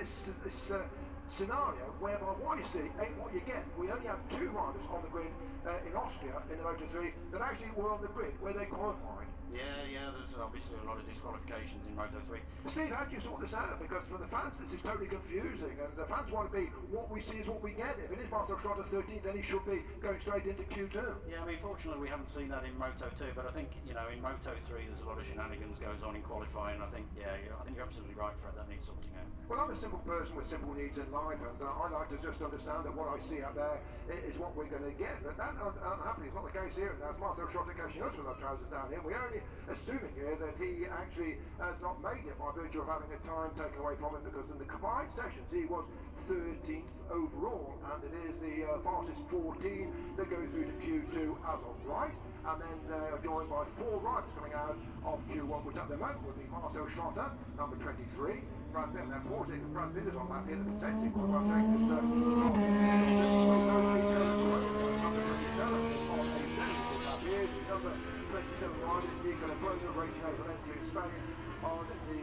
british motor cycle grand prix 2019 ... moto two qualifying one ... and commentary ... copse corner ... lavalier mics clipped to sandwich box ...